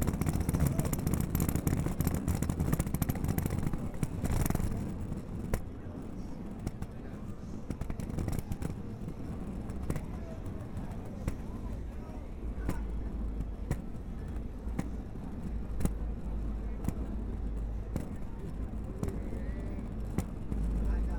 Elm St, Dallas, TX, USA - USA Luggage Bag Drag #11 (Night)
Recorded as part of the 'Put The Needle On The Record' project by Laurence Colbert in 2019.